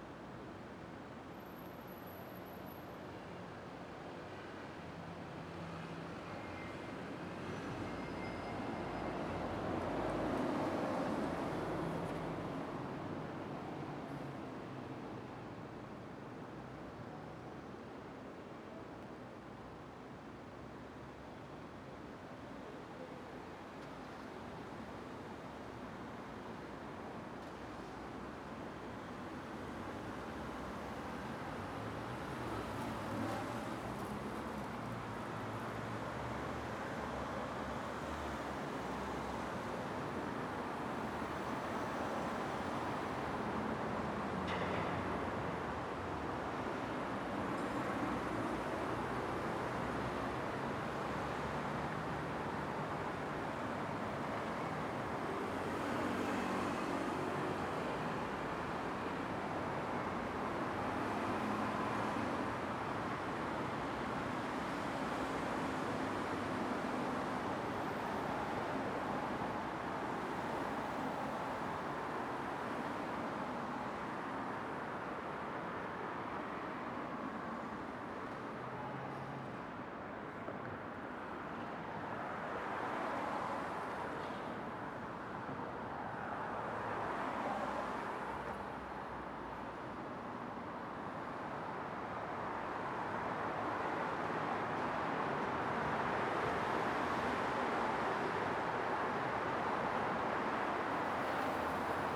대한민국 서울특별시 서초구 우면동 산69-5 - Umyun-dong, Sun-am Bridge
Umyun-dong, Sun-am Bridge
우면동 선암교 밑